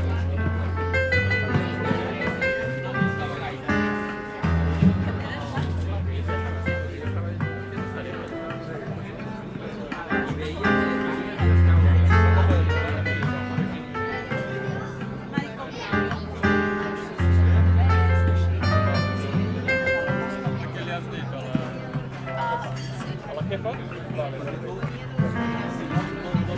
C/ Major, Bellcaire d'Empordà, Girona, Espagne - Belcaire d'Emporda - Espagne - Restaurant L'Horta
Belcaire d'Emporda - Espagne
Restaurant L'Horta
Ambiance du soir avec des "vrais" musiciens
Prise de sons : JF CAVRO - ZOOM H6
Catalunya, España, 9 July 2022, 21:30